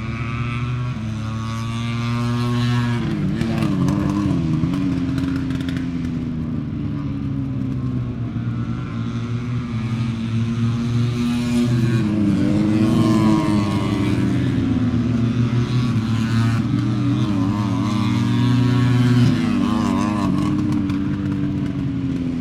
Lillingstone Dayrell with Luffield Abbey, UK - british motorcycle grand prix 2016 ... moto three ...
moto three qualifying ... Vale ... Silverstone ... open lavalier mics clipped to wooden clothes pegs fastened to sandwich box on collapsible chair ... umbrella keeping the rain off ... it was very wet ... associated sounds ... rain on umbrella ... music coming from onsite disco ... weather was appalling so just went for it ...